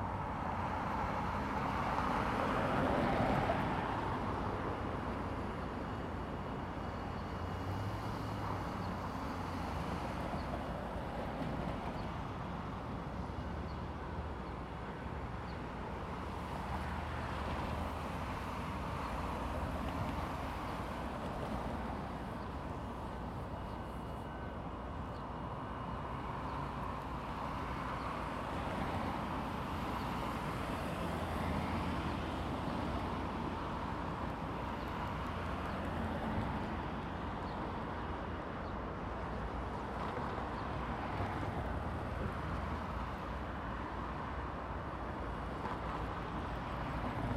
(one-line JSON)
{"title": "Sainte-Thérèse, QC, Canada - Wednesday at the train station ...", "date": "2016-03-30 07:20:00", "description": "Waiting for the train on a wednesday morning...\nZoom H2N, 4 channels mode.", "latitude": "45.64", "longitude": "-73.84", "altitude": "36", "timezone": "America/Toronto"}